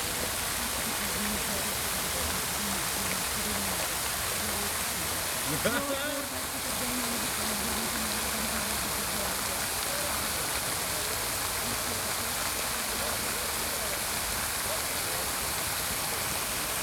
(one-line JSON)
{"title": "Park Planty, Białystok, Polska - fontanny-Park Planty", "date": "2013-05-07 19:18:00", "description": "podświetlane fontanny w Parku Planty", "latitude": "53.13", "longitude": "23.17", "altitude": "146", "timezone": "Europe/Warsaw"}